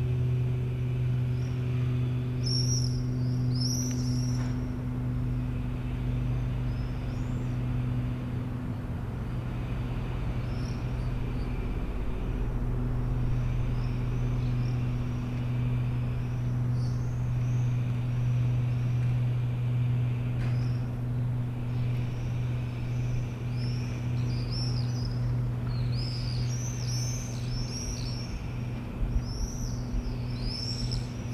Rue Vaugelas, Aix-les-Bains, France - Les martinets
Le ballet des martinets au dessus des toits d'Aix-les-bains depuis la cour de la MJC un dimanche matin.